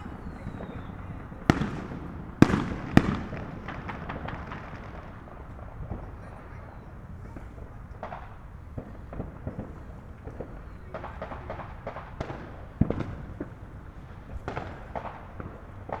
Fireworks and Gunfire, Houston, Texas - Hear comes 2013
Binaural: New Year's Eve in front of my house with my wife, good friend and a few neighbors. I whisper to my friend to watch the time before loosing some fireworks of our own, while the city erupts with explosions.
CA14 omnis > DR 100 MK2